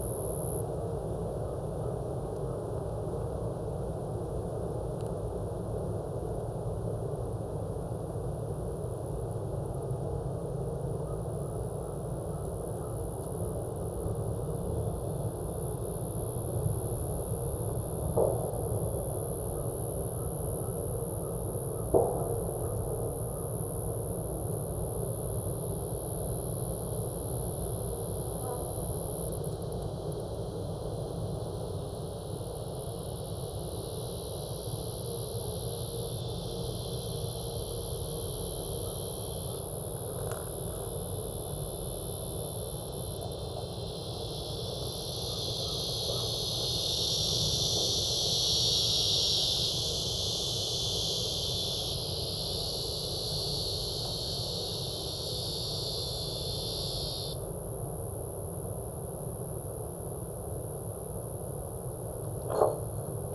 Recorded inside the metal piping of a cattle guard onto a Marantz PMD661 with a pair of DPA 4060s.
TX, USA